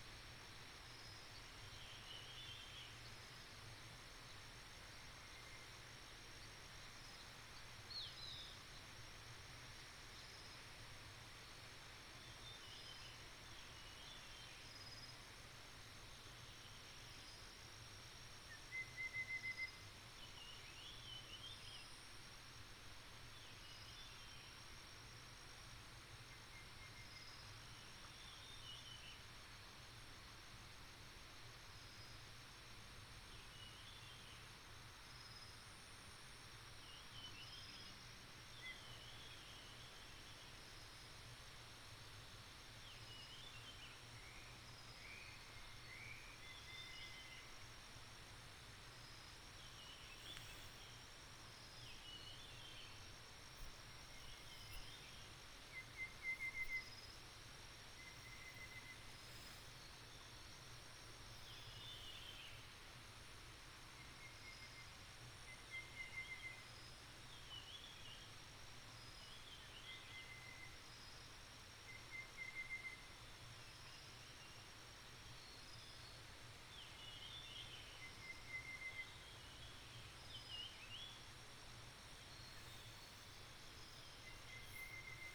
種瓜坑, 埔里鎮桃米里, Nantou County - Stream and Birds
Bird sounds, in the woods, Stream sound